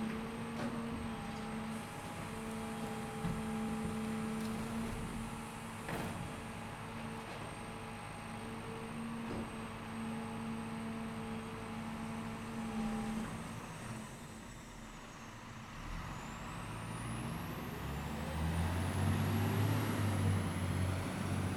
via ruggi 8 - waste truck
waste truck at work